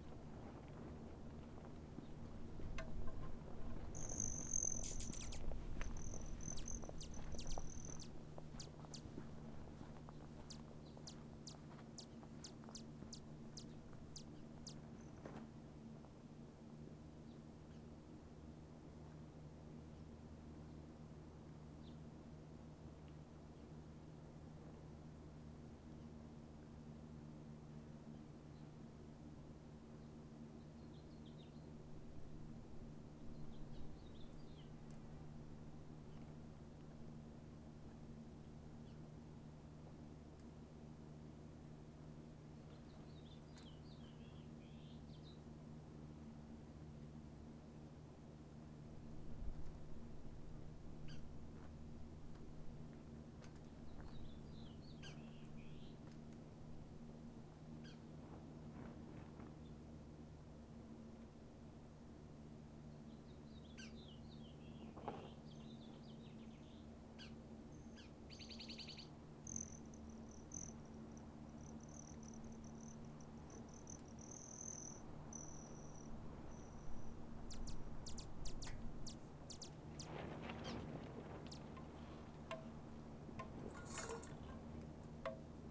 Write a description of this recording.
hummingbirds and bamboo windchimes flies and maybe a panting dog and more all serenade chinqi on this hot summer morn... zoomh4npro